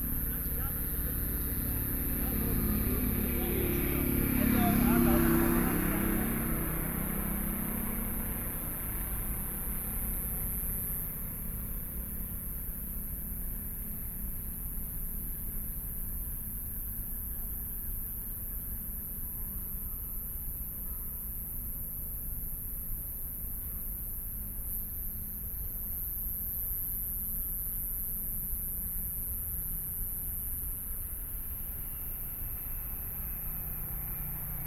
北投區豐年里, Taipei City - Environmental sounds
Traffic Sound, Environmental Noise
Binaural recordings